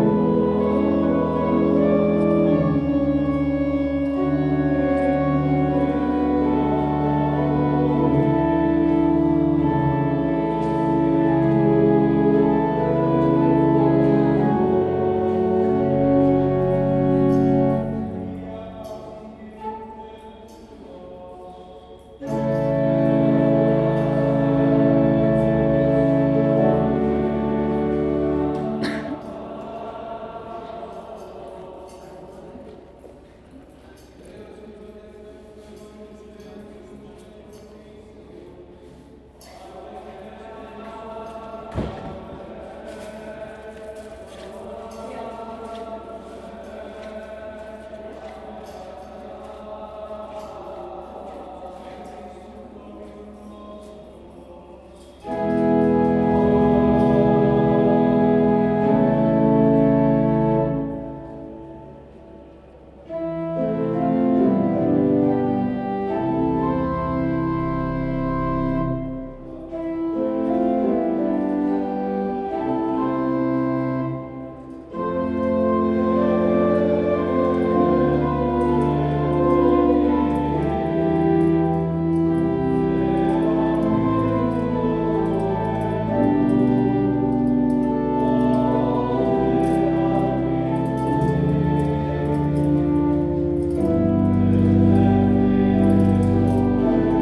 A Zoom Recording of the 17:00 pm daily chanting, at the Church of the Holy Sepulchre, Christian Quarter of the Old City, Jerusalem